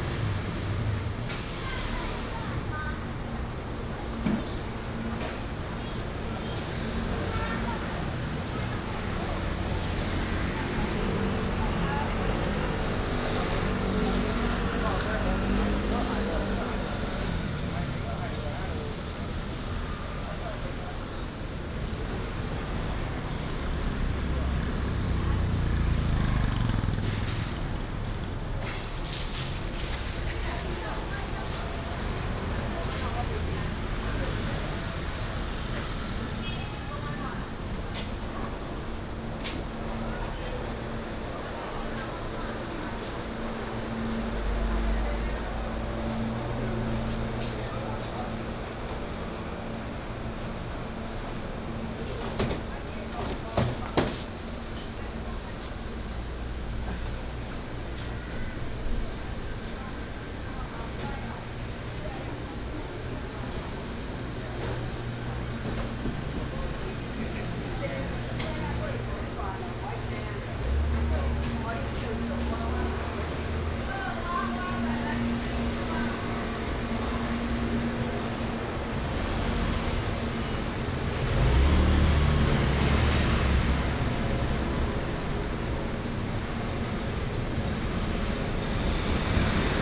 Ren'ai Market, Keelung City - Walking through the market
Traffic Sound, Walking through the market
Keelung City, Taiwan, 4 August 2016, 08:08